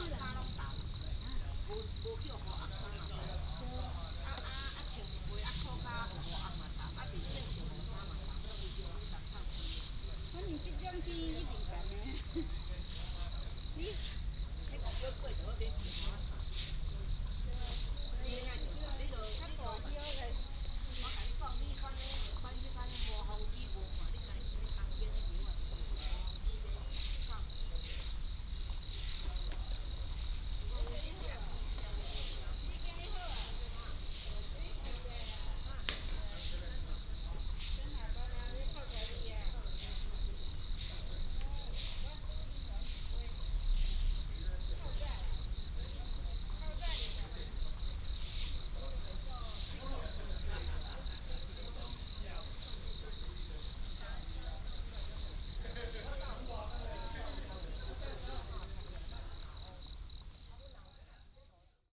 Tamsui District, New Taipei City - Sound of insects
Sound of insects, Next to the golf course, Rainy Day, Aircraft flying through
New Taipei City, Taiwan, 24 May 2015, 09:09